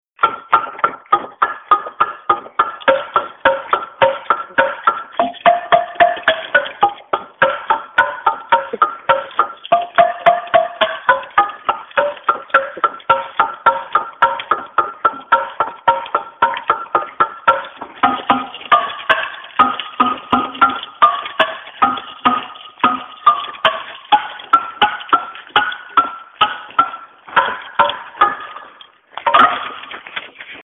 Florian Thein playing a dendrophon.